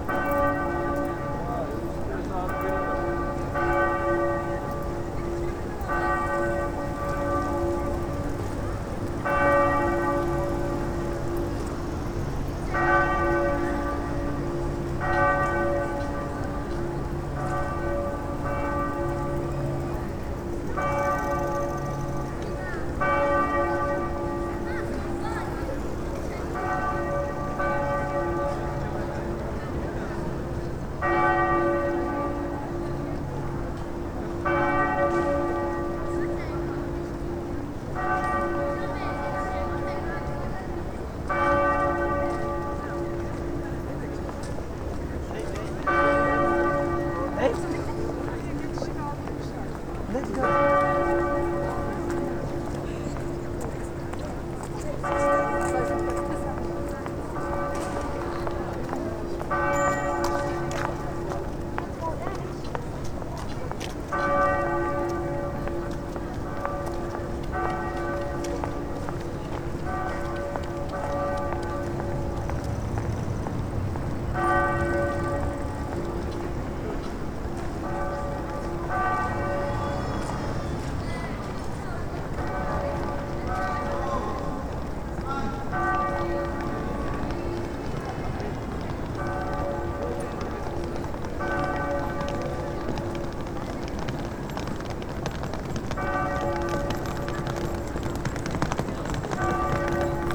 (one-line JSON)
{"title": "Münsterpl., Bonn, Deutschland - Münster Bonn bells", "date": "2010-08-23 17:50:00", "description": "It is a recording from the six o'clock ringing of the bells in Bonn Cathedral. You can hear how the whole place vibrates and resonates.", "latitude": "50.73", "longitude": "7.10", "altitude": "68", "timezone": "Europe/Berlin"}